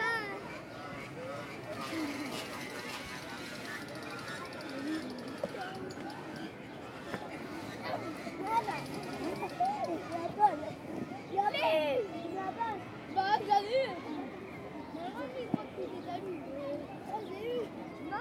Un samedi, Des enfants jouent avec un pistolet en plastique au parc .foule.des mister freezes.
Kids playing with a toy gun in the park.Nice Day.